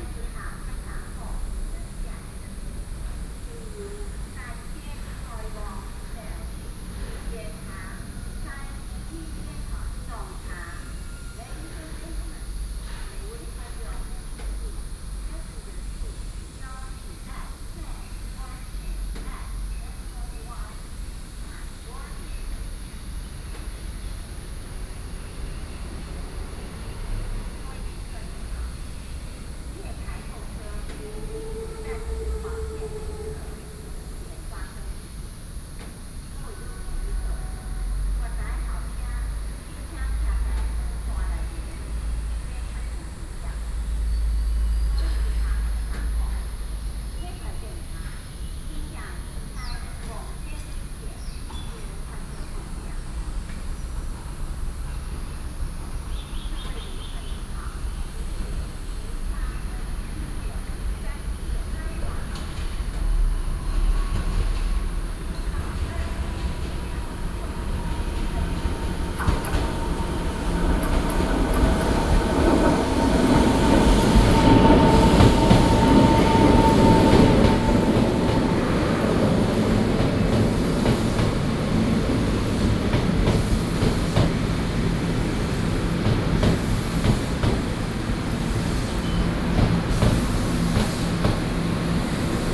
Ln., Jixiang St., Shulin Dist., New Taipei City - Traveling by train
Traveling by train, traffic sound
Sony PCM D50+ Soundman OKM II
New Taipei City, Taiwan, 20 June 2012